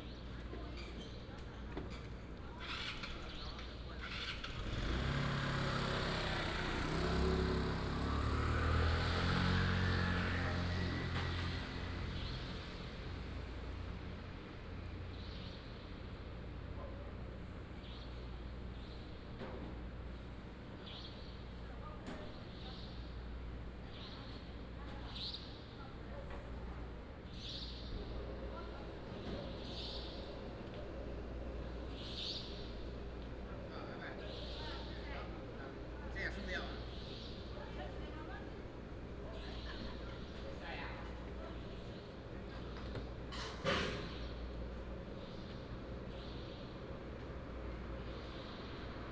沿海公路312號, Linbian Township, Pingtung County - Late night street
Night outside the convenience store, Late night street, Traffic sound, Seafood Restaurant Vendor, Bird cry
Binaural recordings, Sony PCM D100+ Soundman OKM II